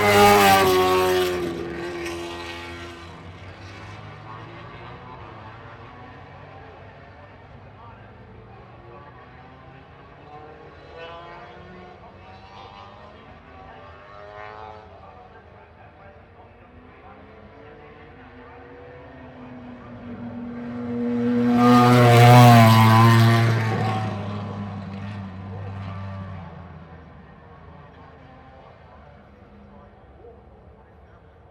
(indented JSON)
{
  "title": "Unnamed Road, Derby, UK - British Motorcycle Grand Prix 2005 ... MotoGP warm up",
  "date": "2005-07-24 10:00:00",
  "description": "British Motorcycle Grand Prix 2005 ... MotoGP warm up ... single point stereo mic to mini-disk ...",
  "latitude": "52.83",
  "longitude": "-1.37",
  "altitude": "74",
  "timezone": "Europe/London"
}